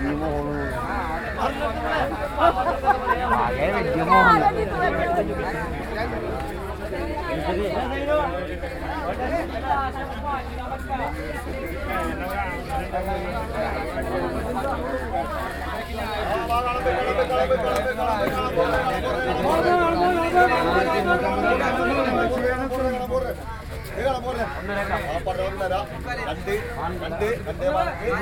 Cochin, river road, Fishmarket
India, Kerala, Cochin, fish